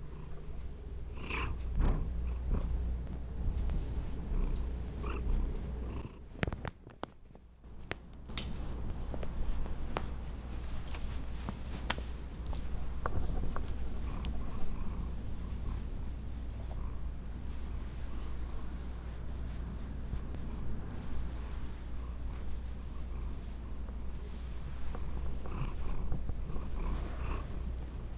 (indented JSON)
{
  "title": "& Canyon Blvd, Boulder, CO, USA - Kitty Purring",
  "date": "2013-02-03 19:30:00",
  "description": "The sound of innocent happiness and fur.",
  "latitude": "40.02",
  "longitude": "-105.27",
  "altitude": "1619",
  "timezone": "America/Denver"
}